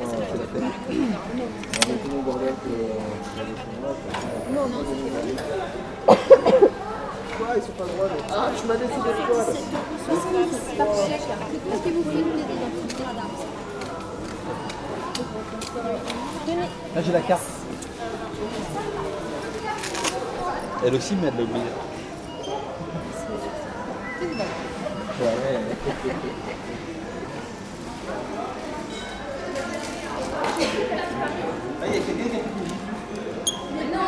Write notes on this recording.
Recording the clients in the supermarket just before Christmas.